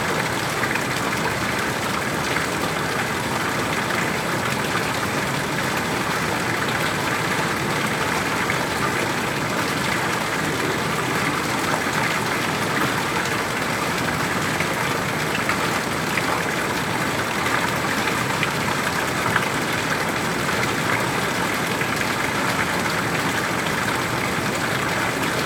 E 47th St, New York, NY, USA - Small waterfall in Midtown, NYC
Sounds from a small waterfall next to the Holy Family Roman Catholic Church, Midtown, NYC.
New York, United States, 23 August 2022